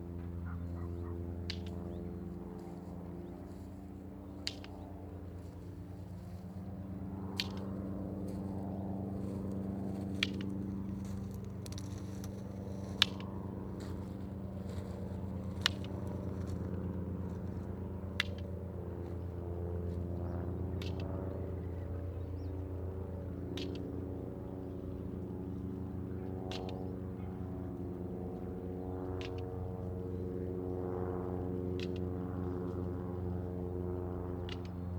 The Dungeness Sound Mirrors were built in the 1920s to listen for enemy aircraft approaching across the English Channel. They were never so effective - the plane being in sight before it could be accurately located - and were quickly superseded by the invention of radar. Today they are inaccessible inside the Dungneness Nature Reserve, but in 2003 it was possible to walk up to them. This recording is the sound of stones being clicked by my friend Dana as she walks slowly along the 60meter length of this vast concrete listening wall. The clicks echo from its hard surface. Propeller planes from nearby Lydd airport and building work from nearby houses are the sonic backdrop.